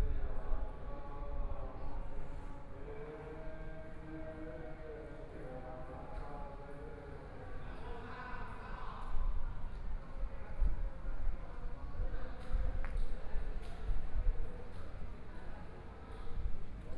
Outside the stadium, Buddhist Puja chanting voice, A group of elderly people are playing ball hammer, Binaural recordings, Zoom H4n+ Soundman OKM II